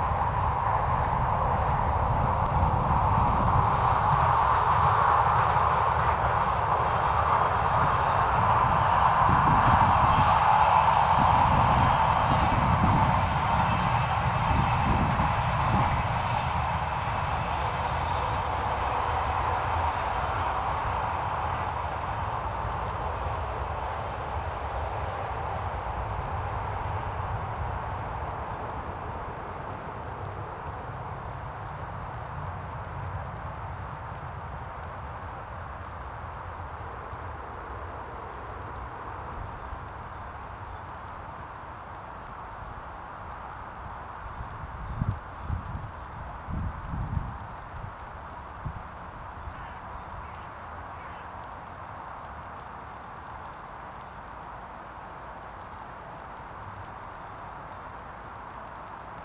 electric crackling from newly build 380kV high voltage power line, passing-by train
(Sony PCM D50)
Deutschland, 26 January 2022